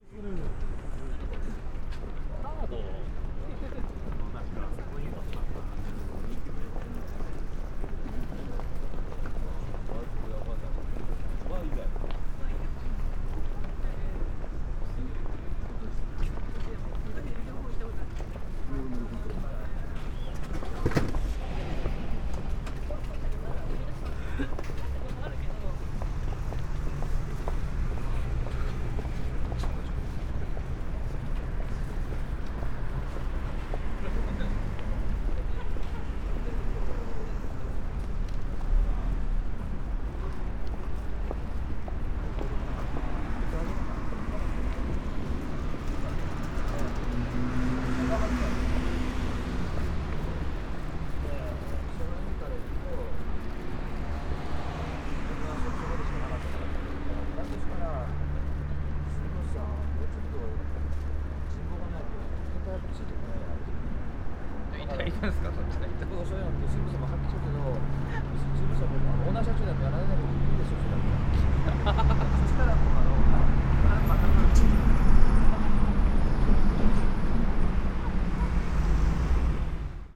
kojimachi, tokyo - lunch time
people walking around with lunch packages within white plastic bags
Chiyoda, Tokyo, Japan